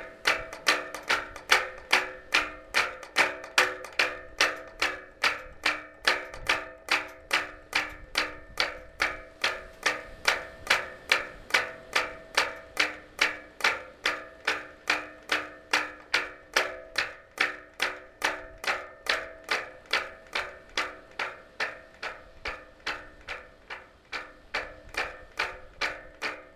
Le Bois-Plage-en-Ré, France - Wind on the boats masts
The weather is good but there's a strong wind today. Masts of the small boats move on the wind.